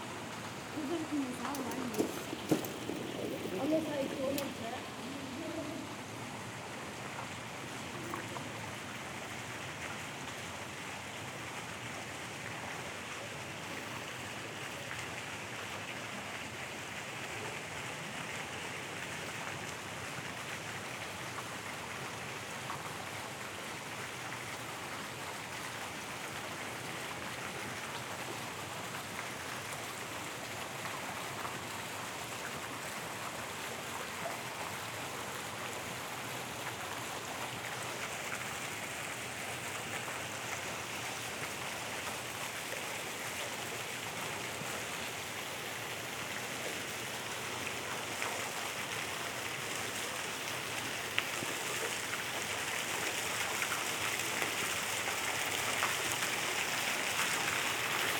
Mechelen, Belgique - Fountains
The Jef Denyn fountains. The stream is completely aleatory. At the beginning, a worried moorhen.
Mechelen, Belgium, 21 October 2018